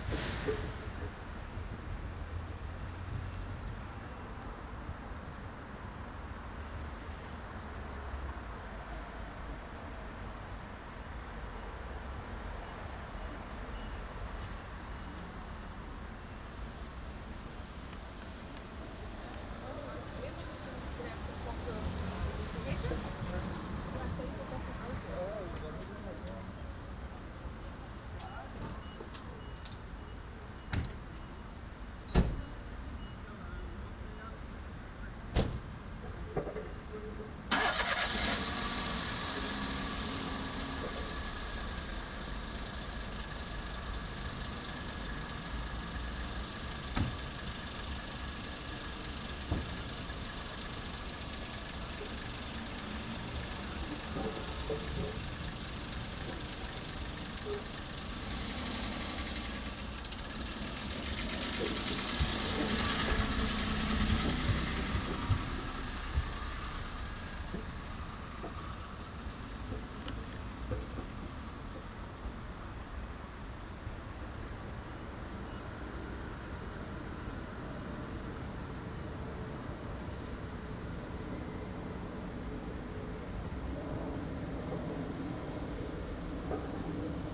The tiles on the parking deck of the Megastores in The Hague are loose, separated by rubber elements. This causes a nice sound when driven over.